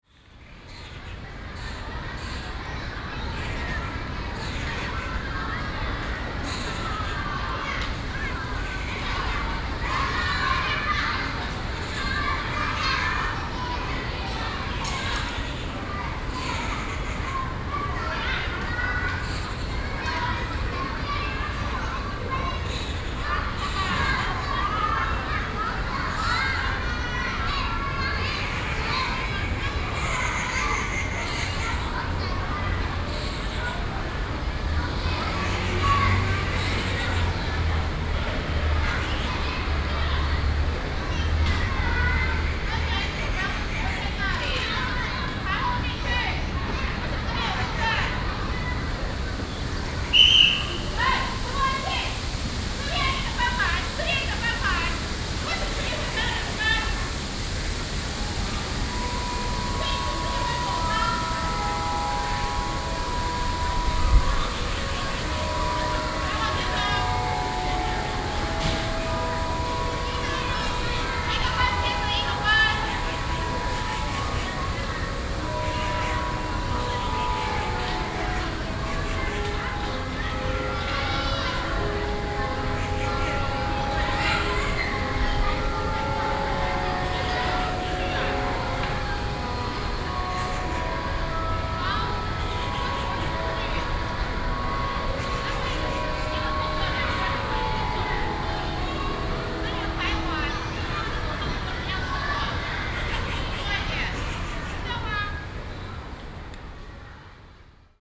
Alley, Lane, Dézhèng Street, New Taipei City - The end of the course the students
The end of the course the students leave school, Zoom H4n+ Soundman OKM II
Xindian District, New Taipei City, Taiwan, 28 June 2012